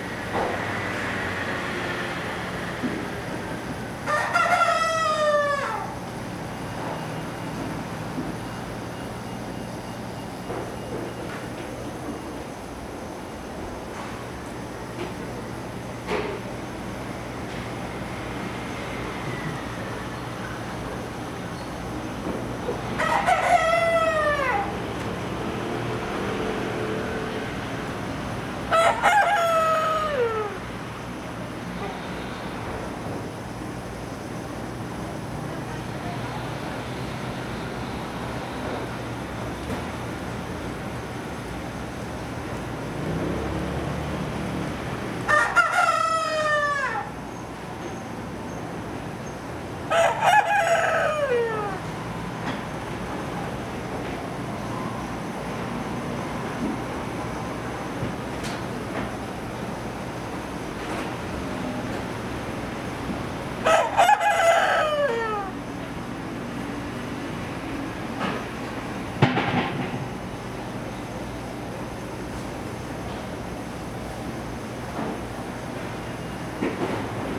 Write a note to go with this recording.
In the Market, Chicken sounds, Sony Hi-MD MZ-RH1 +Sony ECM-MS907